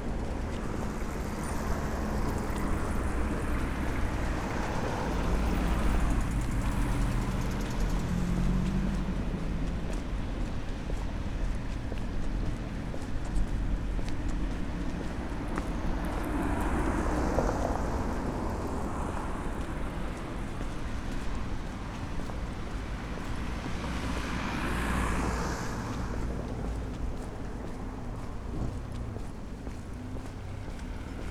walking through the city in the evening